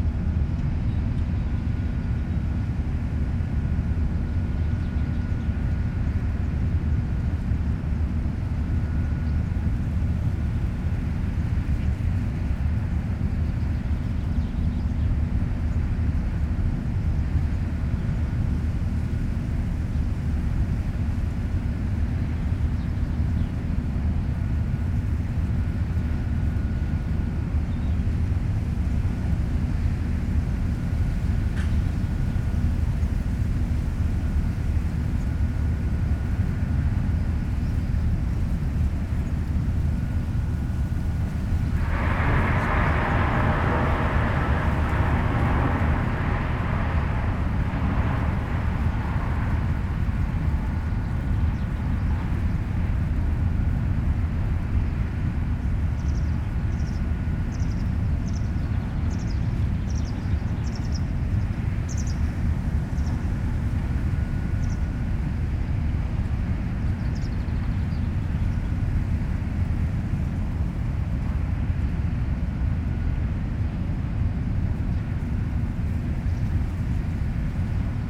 ERM fieldwork -Estonia mine soundscape
soundscape at the ESTONIA mine facility
Ida-Virumaa, Estonia, 2 July 2010